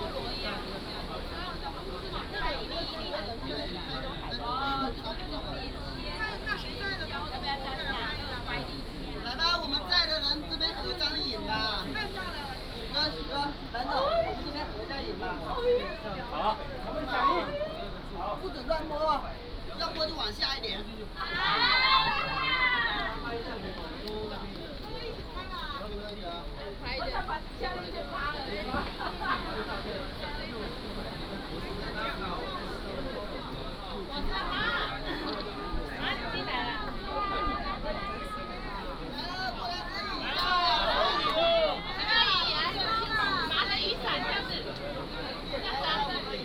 Tourists from China, Sound of the waves, The weather is very hot
Taitung County, Taiwan